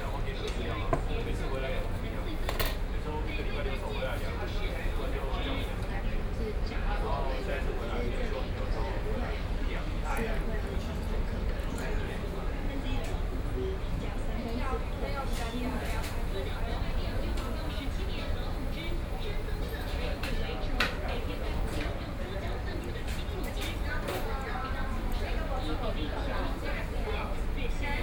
中山區永安里, Taipei city - In the restaurant
In the restaurant, Traffic Sound
Binaural recordings
Zhongshan District, Taipei City, Taiwan, 15 March 2014, 18:24